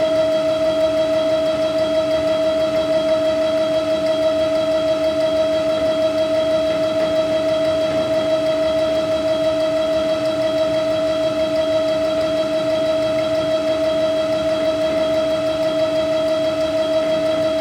Château d'Avignon en Camargue - Factory building, 'Le domaine des murmures # 1'.
From July, 19th, to Octobre, 19th in 2014, there is a pretty fine sound art exhibiton at the Château d'Avignon en Camargue. Titled 'Le domaine des murmures # 1', several site-specific sound works turn the parc and some of the outbuildings into a pulsating soundscape. Visitors are invited to explore the works of twelve different artists.
In this particular recording, you can hear the drone of an old water pump which was once driven by steam, and is now powered by electricity. You will also notice the complete absence of sound from the installation by Emmanuel Lagarrigue in the same facility.
[Hi-MD-recorder Sony MZ-NH900, Beyerdynamic MCE 82]
14 August 2014, ~14:00